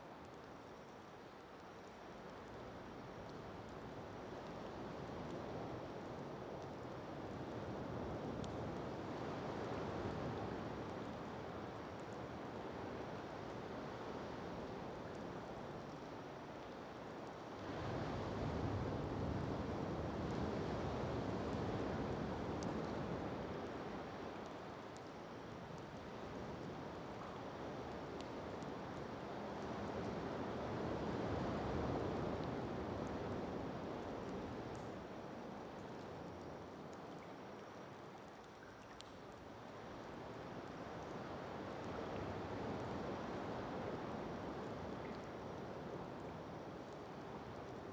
Avaiki Cave, Makefu, Niue - Avaiki Cave Atmos
June 2012